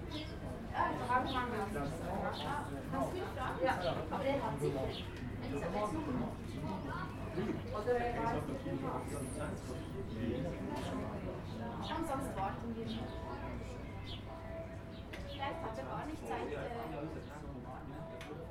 Marzilibad, Kaffee mit Badgeplauder
Marzilibad, Bern, Kaffee, Gelato für Kinder, Geplauder über kaltes Wasser der Aare